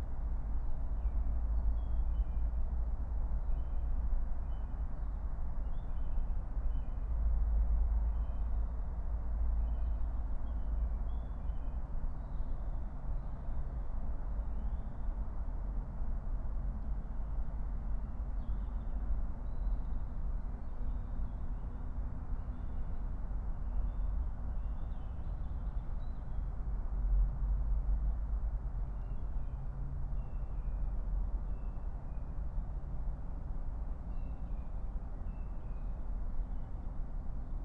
05:00 Berlin, Königsheide, Teich - pond ambience